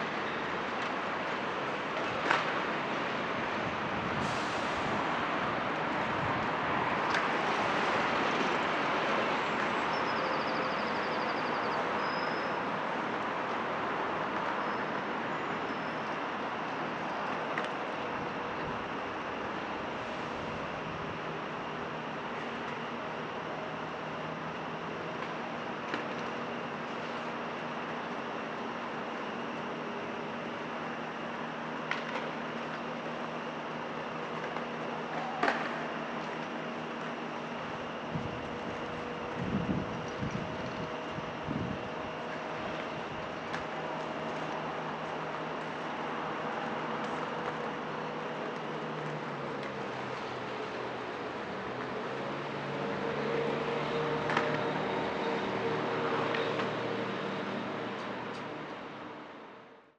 Queens Square, Belfast, UK - Albert Memorial Clock
Recording of pedestrians, vehicles, cyclists, and skateboarders in windy conditions.
County Antrim, Northern Ireland, United Kingdom